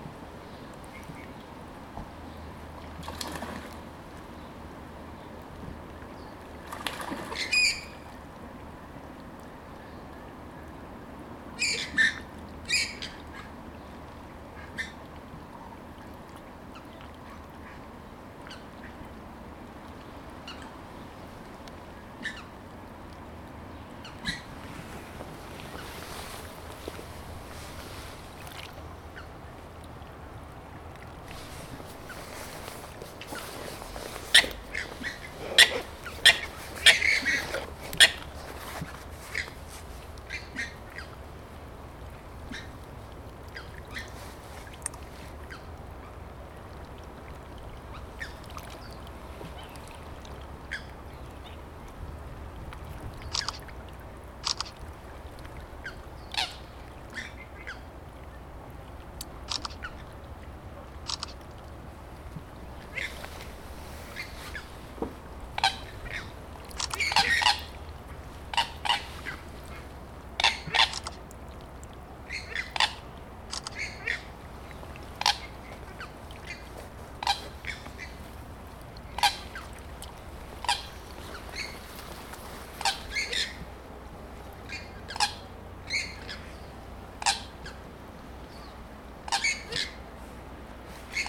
Pierce Road, Milford, Auckland, New Zealand - waterfowl on Lake Pupuke
Recording made while standing on the shore of Lake Pupuke